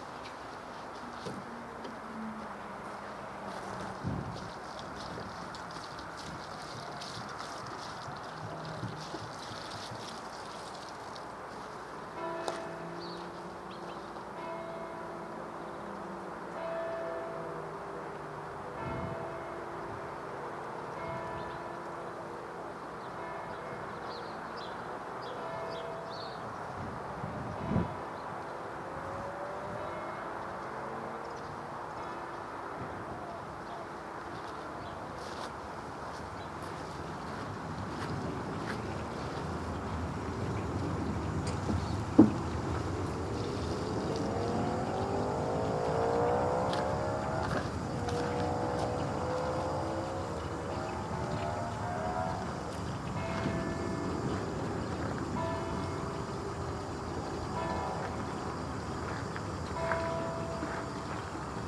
Cimetière de Niévroz, Niévroz, France - Cemetary ambience
Distant bells, footsteps.
Tech Note : Sony PCM-M10 internal microphones
France métropolitaine, France, 23 July 2022